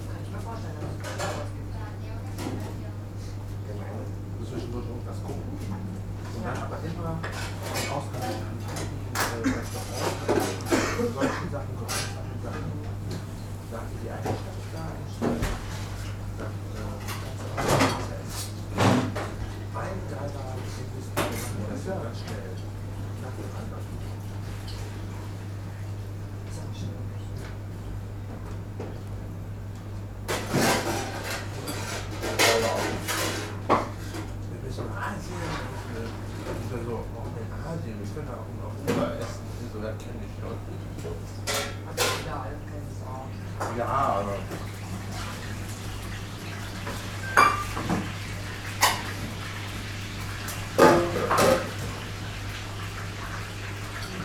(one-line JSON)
{"title": "köln, palmstr. - vietnamese restaurant", "date": "2010-11-30 19:55:00", "description": "small vietnamese restaurant ambience, ventilation hum", "latitude": "50.94", "longitude": "6.94", "altitude": "54", "timezone": "Europe/Berlin"}